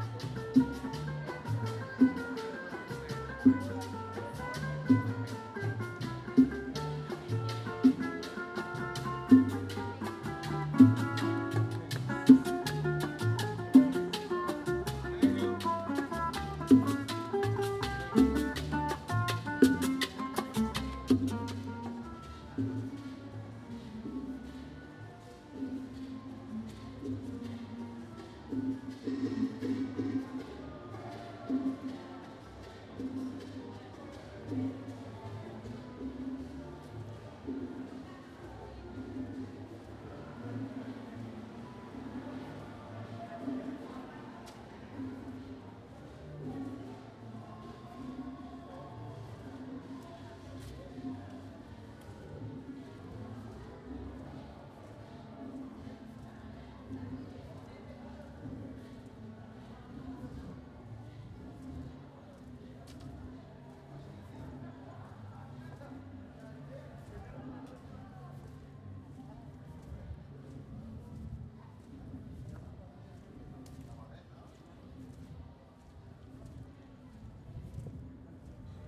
Havana, Cuba - Evening walk in La Habana Vieja
Early evening walk in Old Havana, including belly dance (!) performance in Plaza de la Cathedral.